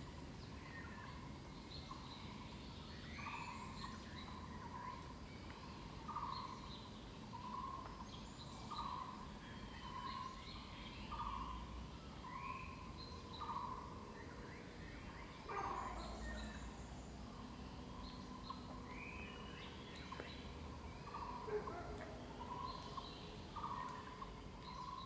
桃米里, Puli Township, Taiwan - In the woods
Birds called, In the woods, Bell
Zoom H2n MS+XY
Puli Township, Nantou County, Taiwan, 6 May 2016